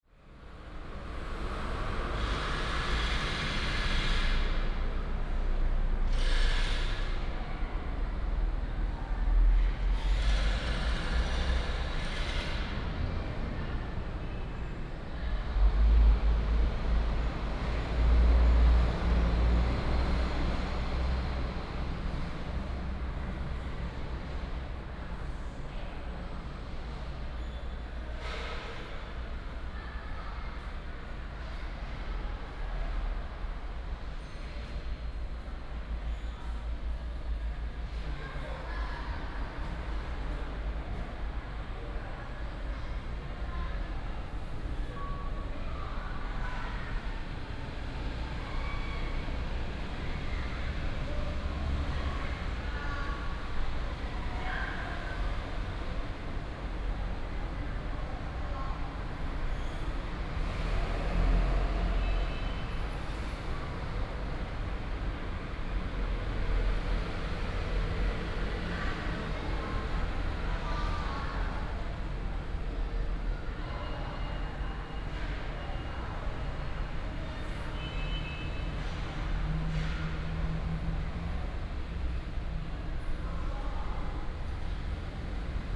Zhuzhong Station, 新竹縣竹東鎮 - Construction sound
In the station hall, Construction sound
Zhudong Township, Hsinchu County, Taiwan, 17 January